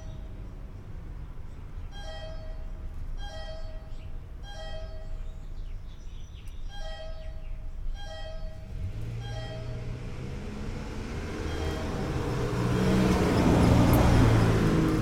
Mysterious bunker signal tone with passing mice, Headlands CA
I went to record a mysterious sound in a locked bunker and found some mice live inside
Marin, California, United States of America